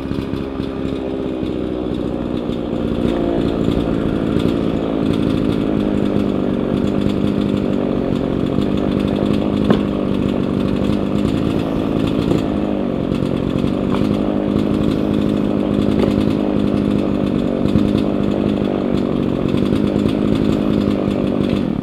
heiligenhaus, angerweg, motorsäge
motorsäge bei der beseitigung von sturmschäden im frühjahr 07
soundmap: nrw
project: social ambiences/ listen to the people - in & outdoor nearfield recordings